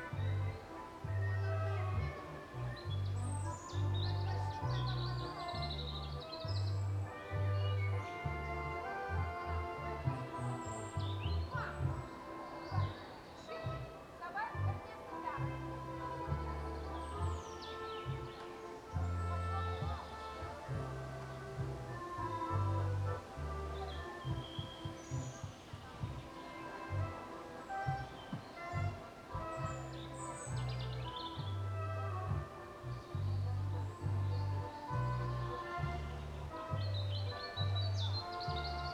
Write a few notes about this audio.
I just went outside the yard, nearer to forest